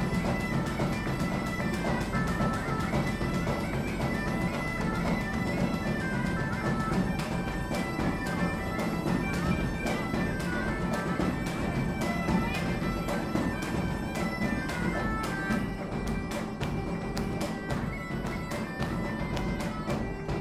Dětenice, Czechia, in the tavern
a band playing in the Detenice tavern